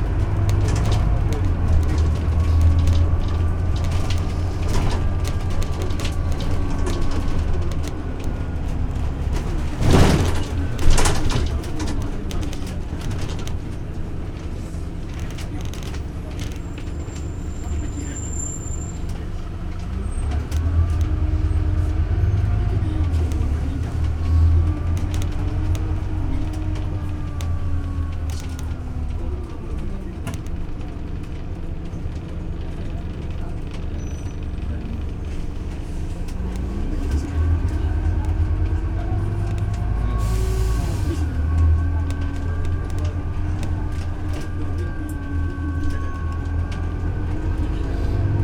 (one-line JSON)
{"title": "Bus 57 Paris - Bus 57", "date": "2011-07-18 14:30:00", "description": "world listening day", "latitude": "48.85", "longitude": "2.38", "altitude": "43", "timezone": "Europe/Paris"}